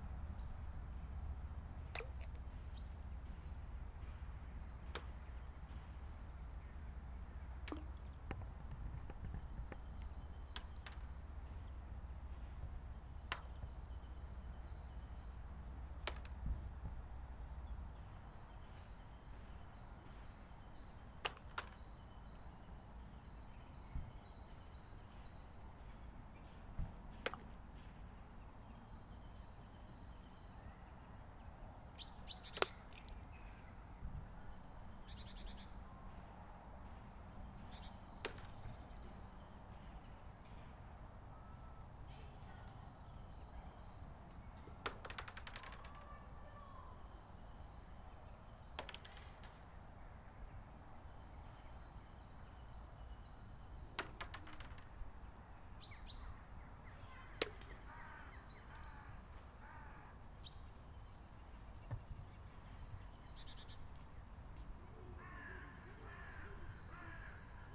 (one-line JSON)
{"title": "Borgersteinhoeve, Sint-Katelijne-Waver, België - Borgersteinhoeve", "date": "2019-01-19 16:20:00", "description": "Children throwing rocks on the ice", "latitude": "51.04", "longitude": "4.51", "altitude": "4", "timezone": "Europe/Brussels"}